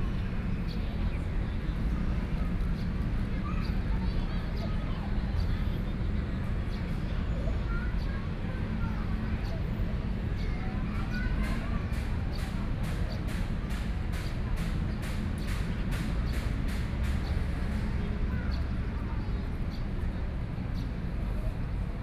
Holiday parks, Traffic Sound, Birds
Sony PCM D50+ Soundman OKM II